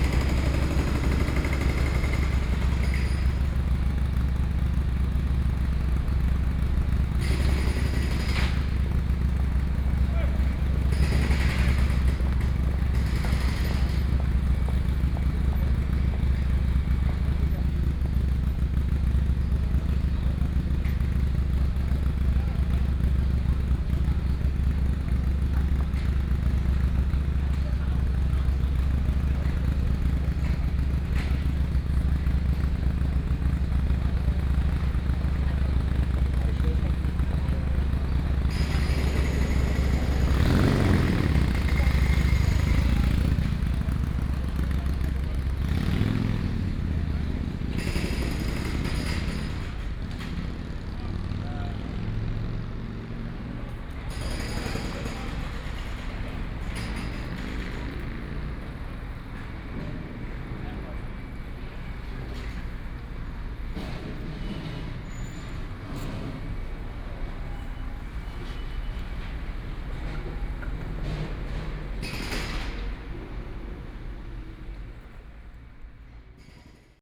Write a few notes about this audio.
road construction, Standing on the roadside